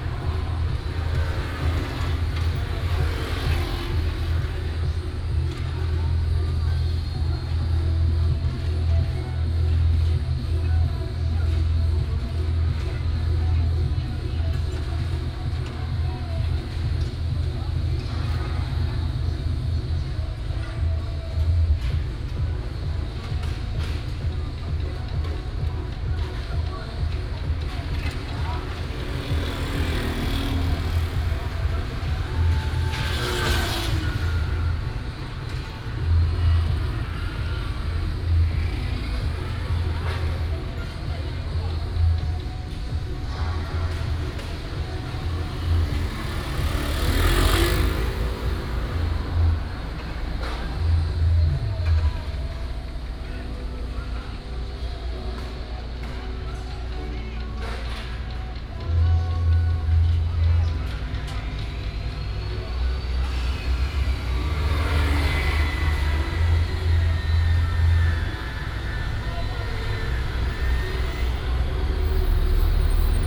{"title": "貿易八村, Hsinchu City - Site construction sound", "date": "2017-09-27 15:53:00", "description": "Site construction sound, traffic sound, Binaural recordings, Sony PCM D100+ Soundman OKM II", "latitude": "24.80", "longitude": "121.00", "altitude": "56", "timezone": "Asia/Taipei"}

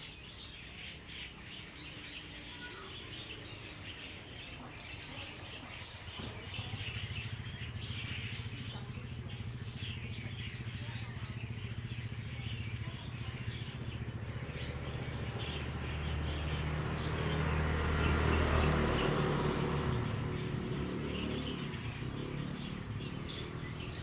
{"title": "Hsiao Liouciou Island, Pingtung County - Birds singing", "date": "2014-11-01 10:59:00", "description": "Birds singing\nZoom H2n MS +XY", "latitude": "22.35", "longitude": "120.37", "altitude": "28", "timezone": "Asia/Taipei"}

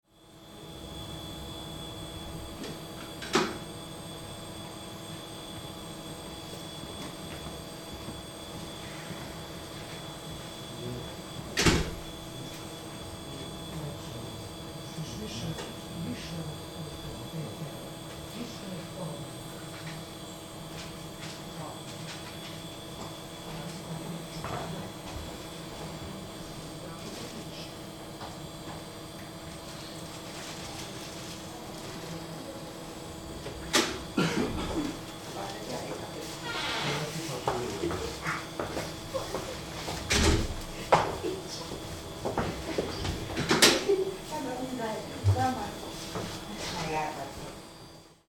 {"title": "Bundesbank, Leibnizstr. - Warteraum, Geldautomat", "description": "04.12.2008 11:35 Geldautomat im Warteraum / waiting room, cash machine", "latitude": "52.51", "longitude": "13.32", "altitude": "37", "timezone": "GMT+1"}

